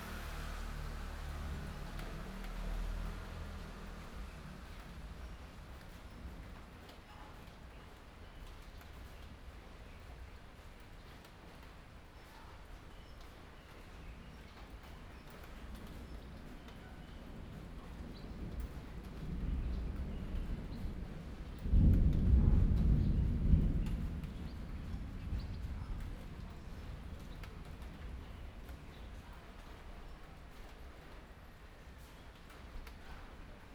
Beitou - Thunderstorm
Thunderstorm, Zoom H4n+ Soundman OKM II +Rode NT4